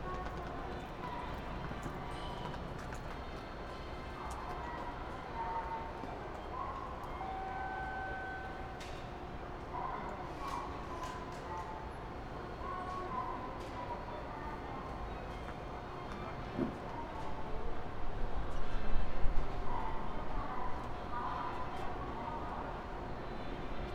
Osaka, Chikko, near Osaka aquarium - Ferris wheel
waiting for the bus, hum of a big Ferris wheel in the distance, music, warning bell, clang of the cabins. Two girls come by and look for departure hours at the time table.
近畿 (Kinki Region), 日本 (Japan), 30 March, ~21:00